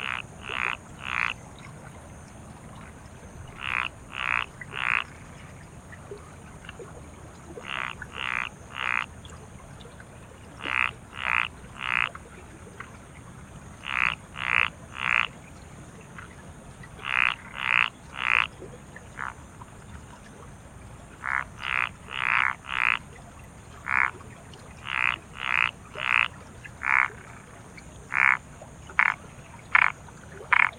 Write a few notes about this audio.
Frogs in rice paddies and irrigation water running in a ditch in Hayashi, Ritto City, Shiga Prefecture Japan. Recorded at about 02:00 on July 16, 2013 with an Audio-Technica BP 4025 microphone and an Olympus LS100 recorder. We can hear low frequency rumble from the Meishin Expressway and Japan Route #1. WLD 2013